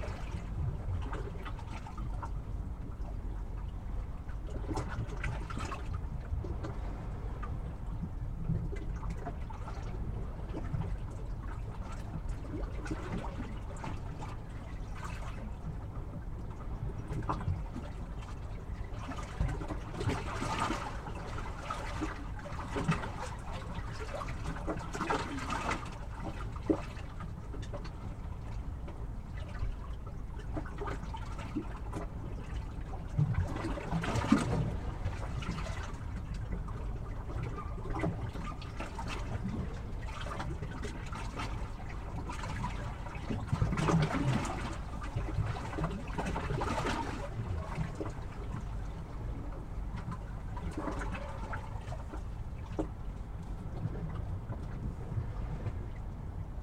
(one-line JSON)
{"title": "Platanias, Crete, amongst stones of the marina", "date": "2019-04-18 20:45:00", "description": "mics amongst the stones", "latitude": "35.52", "longitude": "23.91", "altitude": "1", "timezone": "Europe/Athens"}